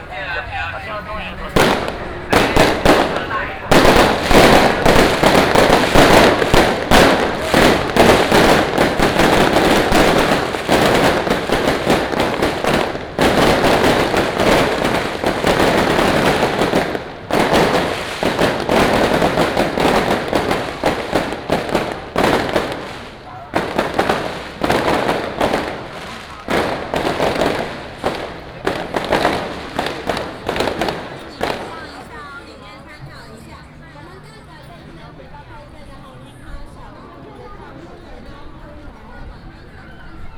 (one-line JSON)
{"title": "Jianggong Rd., 大甲區大甲里 - Walking on the road", "date": "2017-03-24 15:10:00", "description": "Temple fair market, Fireworks and firecrackers", "latitude": "24.35", "longitude": "120.62", "altitude": "61", "timezone": "Asia/Taipei"}